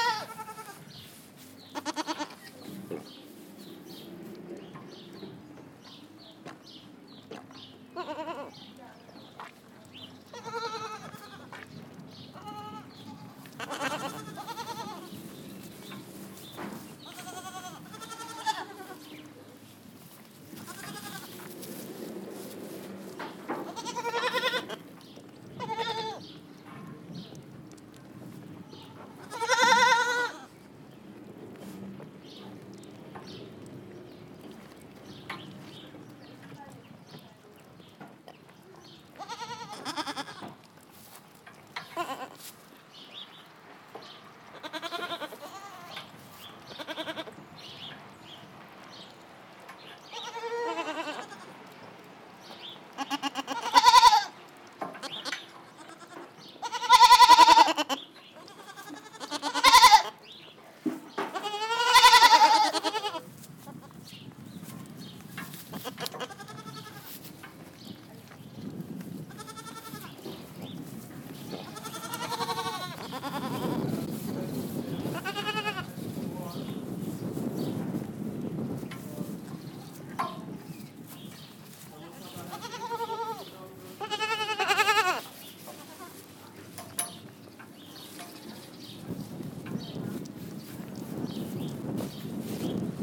Gut Adolfshof, Hämelerwald - Zicklein
Junge Ziegen gleich nach der Fütterung.
Sony-D100, int. Mic.
17 April 2022, ~17:00